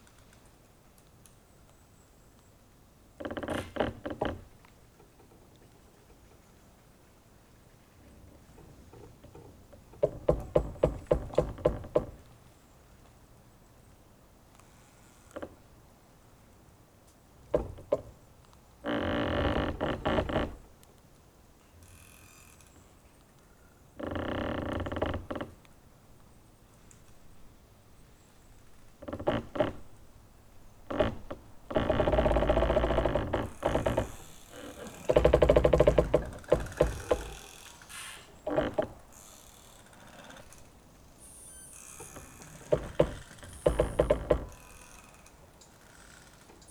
Lithuania, Vyzuonos, singing trees
some windfall and here are many pine-trees rubbing against each other